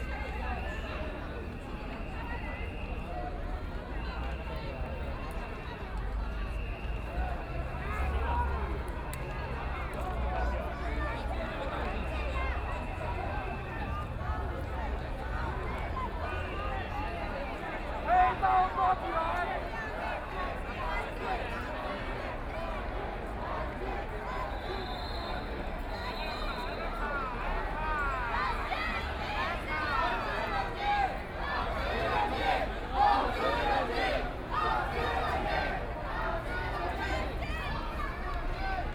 Underworld gang leaders led a group of people, In a rude language against the people involved in the student movement of students